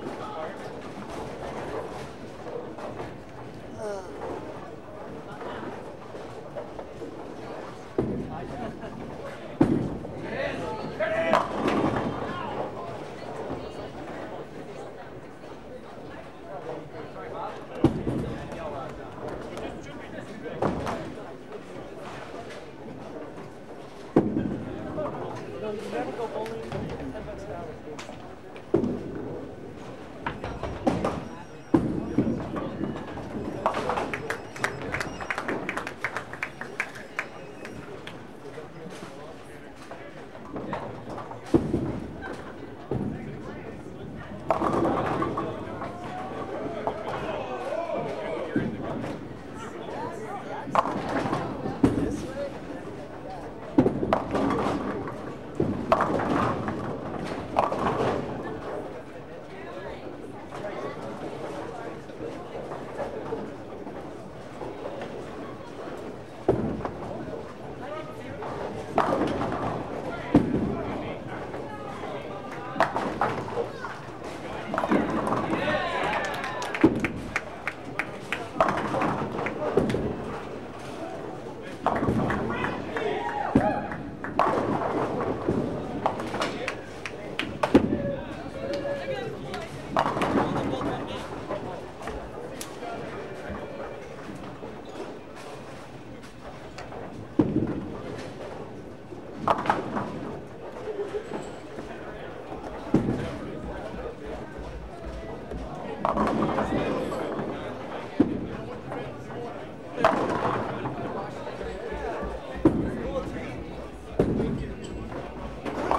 Lynnwood Bowl - Bowling Alley
Bowling truly is The Sport of Kings. Where else can you get such instant feedback and wild enthusiasm for every good shot? What other sport encourages participants to drink beer?
Major elements:
* Strikes, spares and the occasional gutterball
* Pin-setting machines
* Appreciative bowlers
* A cellphone